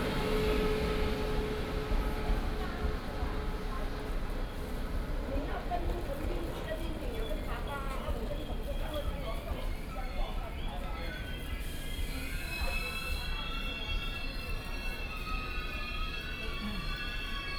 At the station platform, The train arrives and departs